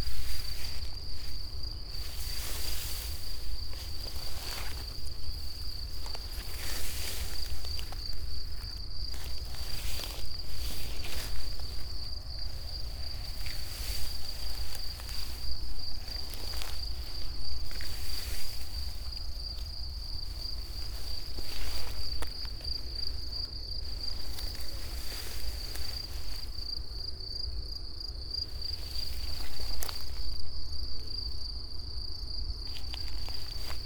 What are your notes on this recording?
above the trees, grass is getting dry and almost all seeds flew already on their seasonal path